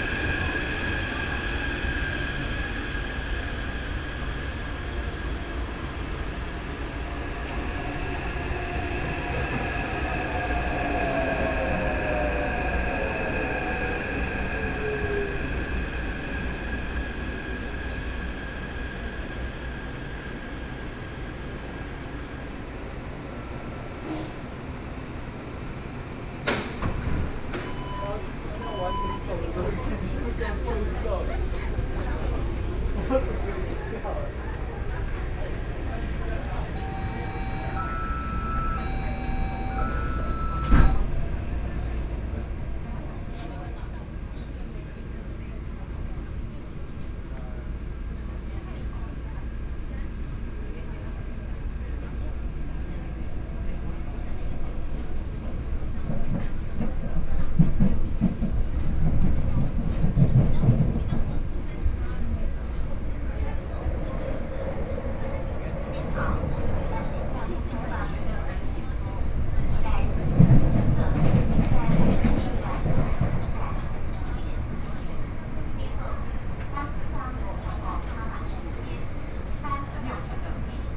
Tamsui District, New Taipei City - Tamsui Line (Taipei Metro)
from Zhuwei Station to Tamsui Station, Binaural recordings, Sony PCM D50 + Soundman OKM II